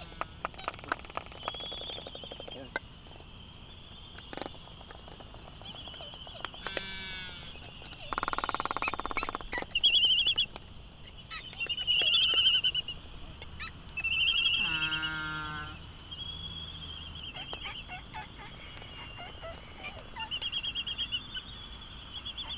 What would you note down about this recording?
Laysan albatross dancing ... Sand Island ... Midway Atoll ... bird calls ... laysan albatross ... canary ... open lavaliers on mini tripod ... background noise ... windblast ... and voices ...